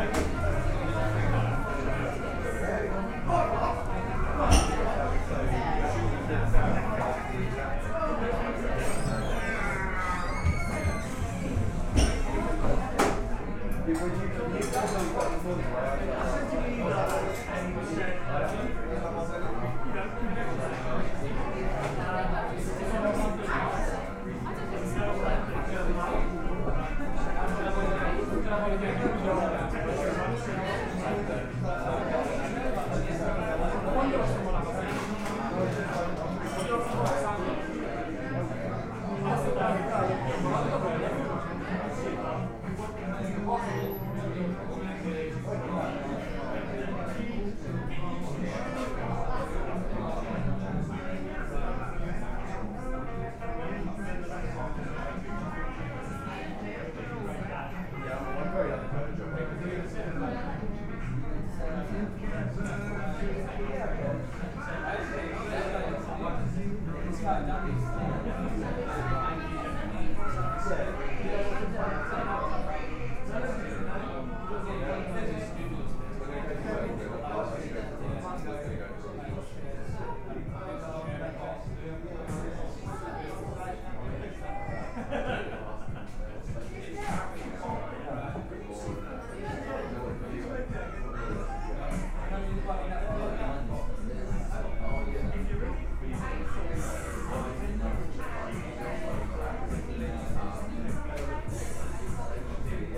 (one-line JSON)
{"title": "The Dorset, Cafe, Brighton - The Dorset, Cafe - Friday Afternoon", "date": "2010-02-05 19:02:00", "description": "Friday afternoon, The Dorset Cafe, Brighton", "latitude": "50.83", "longitude": "-0.14", "altitude": "21", "timezone": "Europe/London"}